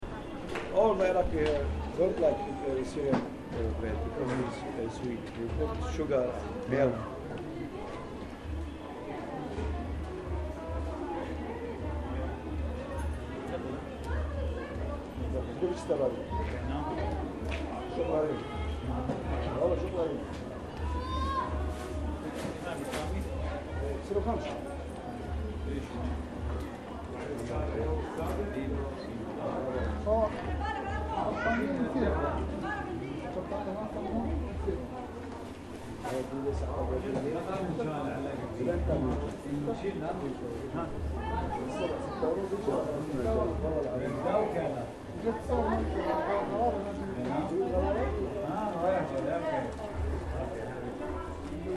:jaramanah: :at another iraqi bakery: - twentyfour
Syria, 29 October 2008